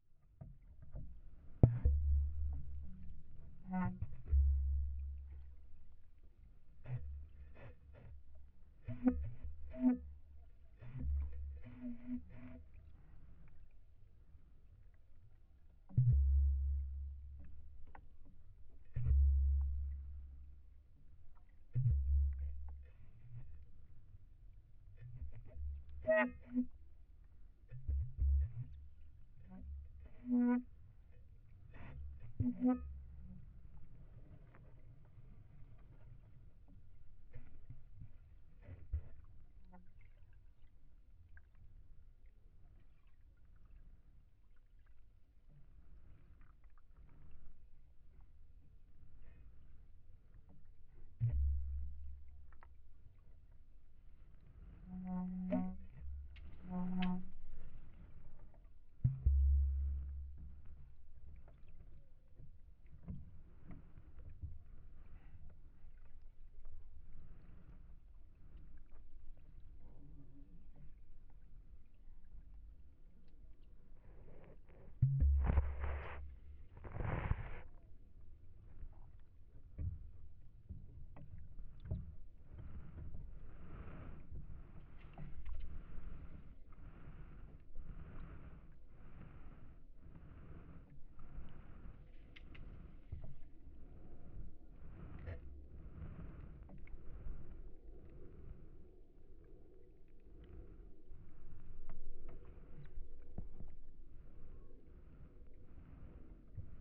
Suezkade, Den Haag - hydrophone rec at a floating dock
Mic/Recorder: Aquarian H2A / Fostex FR-2LE
The Hague, The Netherlands, 22 April 2009, 15:40